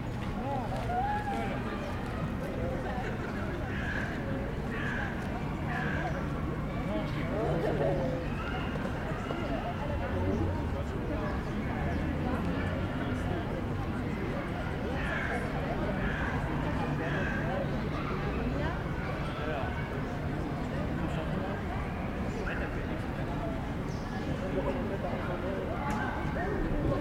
Rue Hector Berlioz, Grenoble, France - Jardin de ville
Par cette belle journée ensoleillée beaucoup de monde dans le jardin de Ville.